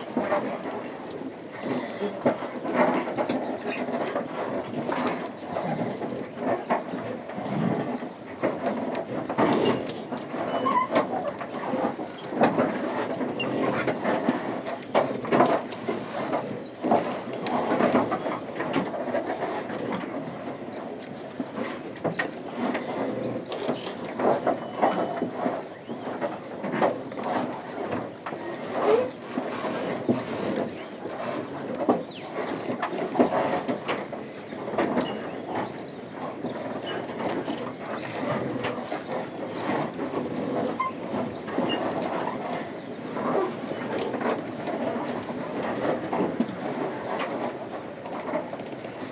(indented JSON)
{
  "title": "Saint-Martin-de-Ré, France - The marina",
  "date": "2018-05-20 07:50:00",
  "description": "The very soft sound of the marina during a quiet low tide, on a peaceful and shiny sunday morning.",
  "latitude": "46.21",
  "longitude": "-1.37",
  "altitude": "2",
  "timezone": "Europe/Paris"
}